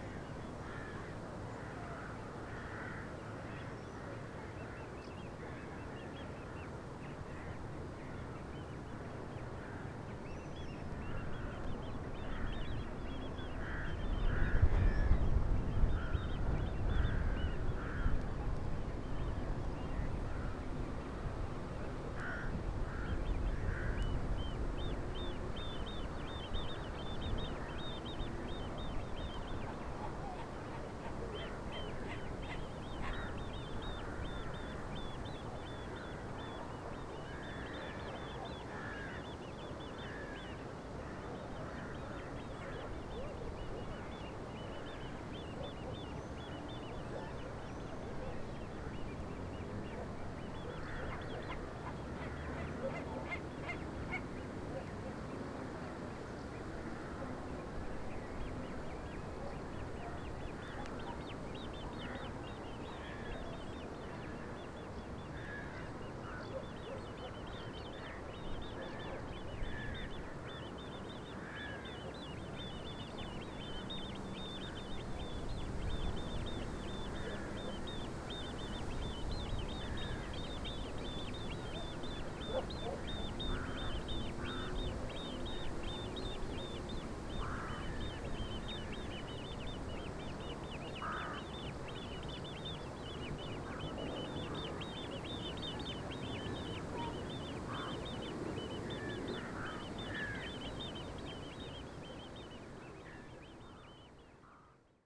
Lithuania, Utena, from the pontoon bridge
standing on the pontoon bridge
22 July 2011, ~10:00